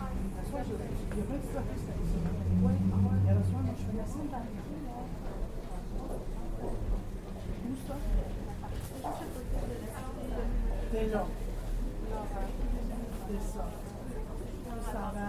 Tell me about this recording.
equipment used: Ipod Nano with Belkin Interface, Waiting for the 55 bus in the indoor South Shore bus terminal, all lines delayed 20 minutes, 1000 De La Gauchetiere